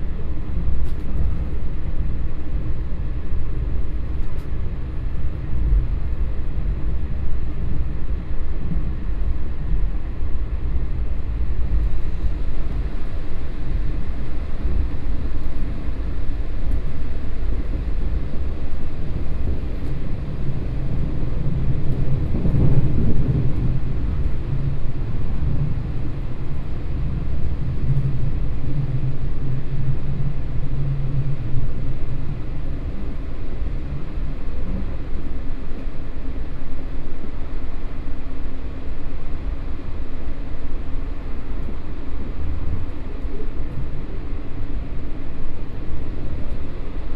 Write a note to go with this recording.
from Banqiao Station to Wanhua Station, Sony PCM D50 + Soundman OKM II